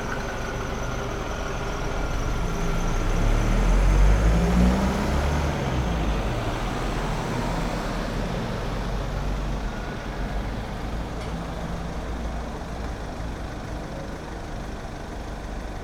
Funchal, Largo do Phelps - taxi stop
one of the taxi drivers has a tv installed in his cab. the muffled conversation you can hear comes from the tv show, low pass filtered by the body of the car. at some point the driver opens the door, the customer gets in and they take off.
4 June 2015, 23:42